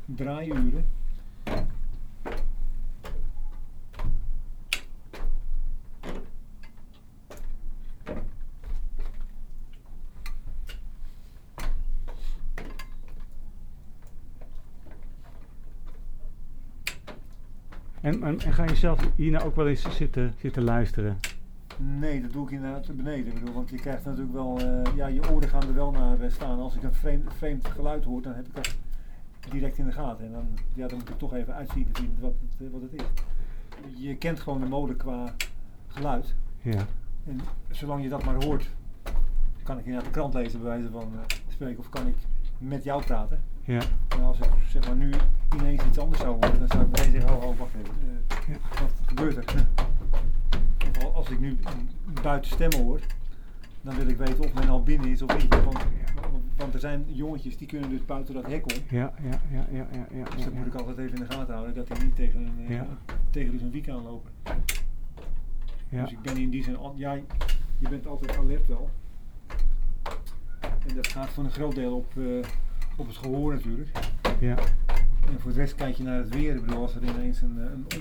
naar boven onder de molenkap zonder te malen
de trap op, tussenverdieping en dan boven onder de kap
taking the little stairs to go up under the roof of the windmill